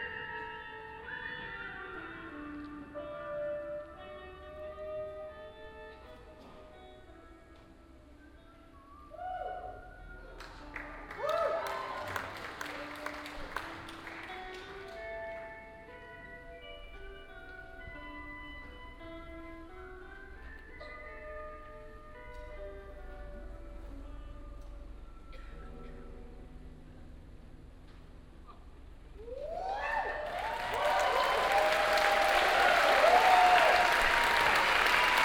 {
  "title": "moers, moers festival, tent atmo and announcement - moers, moers festival, fred frith - cosa brava and final applaus",
  "date": "2010-06-04 11:34:00",
  "description": "soundmap nrw - social ambiences and topographic field recordings",
  "latitude": "51.45",
  "longitude": "6.62",
  "altitude": "26",
  "timezone": "Europe/Berlin"
}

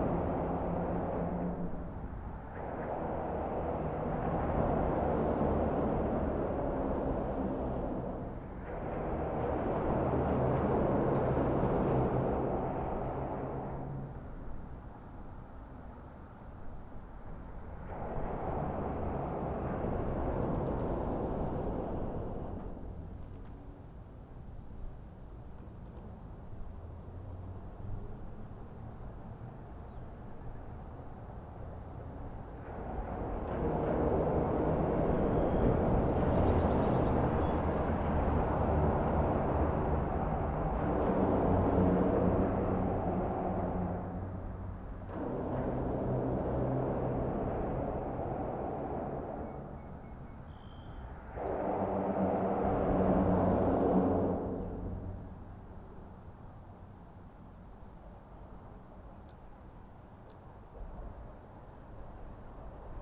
{
  "title": "Manistee River Channel (Maple St.), Manistee, MI - Underneath the Maple Street Bridge",
  "date": "2016-03-22 13:20:00",
  "description": "Vehicles pass overhead on a Tuesday afternoon, a few steps off the River Walk. Stereo mic (Audio-Technica, AT-822), recorded via Sony MD (MZ-NF810, pre-amp) and Tascam DR-60DmkII.",
  "latitude": "44.25",
  "longitude": "-86.32",
  "altitude": "177",
  "timezone": "America/Detroit"
}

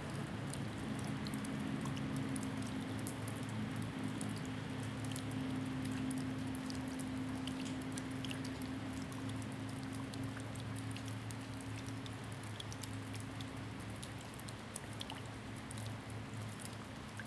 {
  "title": "桃米里水上巷3-3號, 埔里鎮 - raindrop",
  "date": "2016-03-24 10:48:00",
  "description": "raindrop\nZoom H2n MS+XY",
  "latitude": "23.94",
  "longitude": "120.92",
  "altitude": "480",
  "timezone": "Asia/Taipei"
}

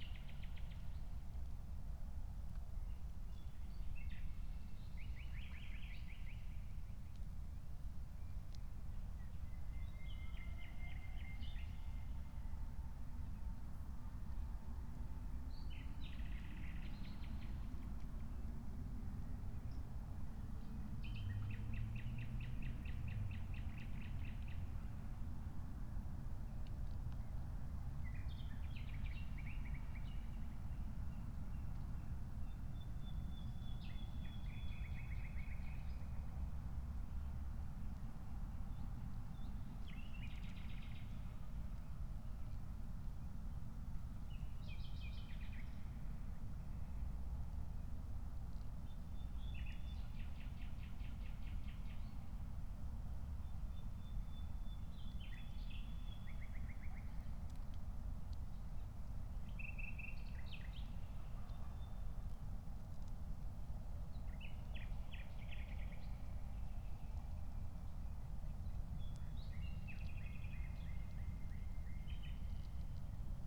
{
  "date": "2021-05-16 00:25:00",
  "description": "00:25 Berlin, Buch, Mittelbruch / Torfstich 1 - pond, wetland ambience",
  "latitude": "52.65",
  "longitude": "13.50",
  "altitude": "57",
  "timezone": "Europe/Berlin"
}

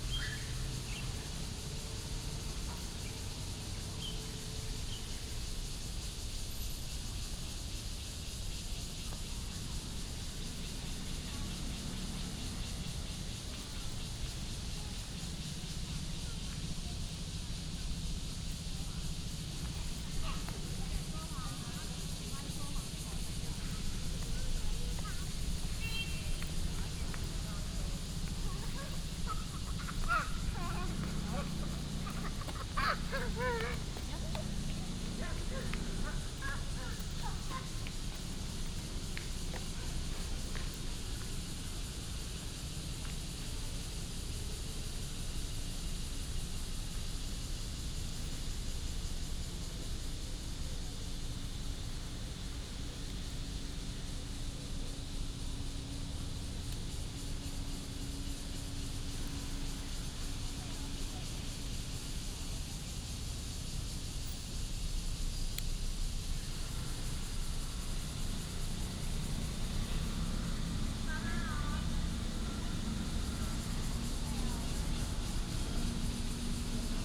{
  "title": "新龍公園, Da'an District, Taipei City - in the Park",
  "date": "2015-06-28 18:29:00",
  "description": "in the Park, Cicadas cry, Bird calls, Traffic Sound",
  "latitude": "25.03",
  "longitude": "121.54",
  "altitude": "19",
  "timezone": "Asia/Taipei"
}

